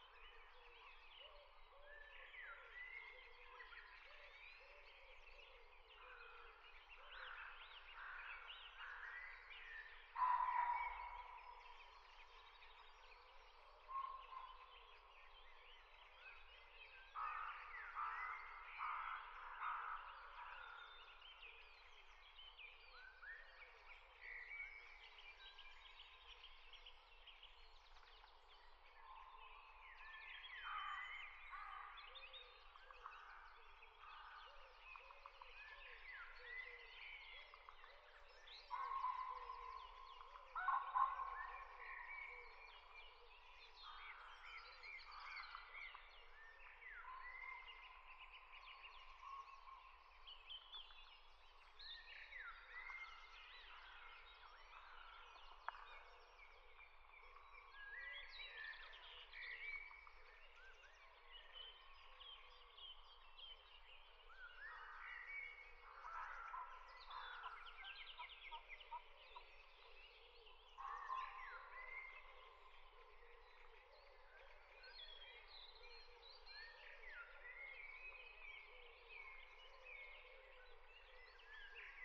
I made a similar recording in the same spot a year ago and wanted to compare the two bearing in mind the C19 lockdown. There are hardly any planes and the roads are a lot quieter. Sony M10
South East, England, United Kingdom, 25 April 2020, ~05:00